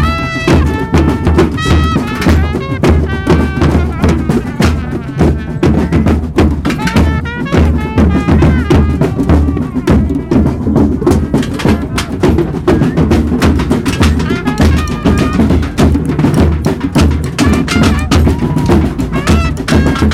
Cologne, Neusser Str., Deutschland - Geisterzug/ Ghosts parade
Musicians with drums and trumpet make a spontaneous session during the "Geisterzug" (Ghosts parade). The performance ends abruptly when an armada of municipal garbage collection trucks arrives, cleaning the streets of glas bottles.
"Geisterzug" is an alternative and political carnival parade in Cologne, taking place in the late evening of carnivals saturday. Everyone who wants can join the parade.
Cologne, Germany, 1 March 2014, ~22:00